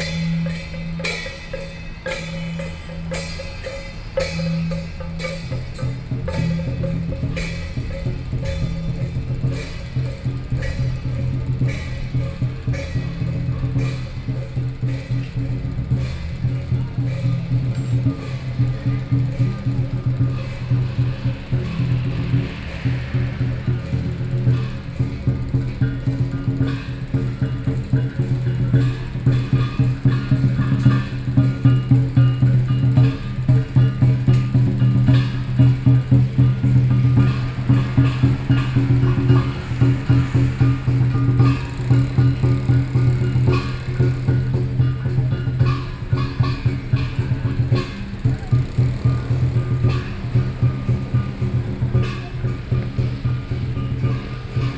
Traffic Noise, Traditional FestivalsSony, PCM D50 + Soundman OKM II
Taipei City, Taiwan, 24 August 2013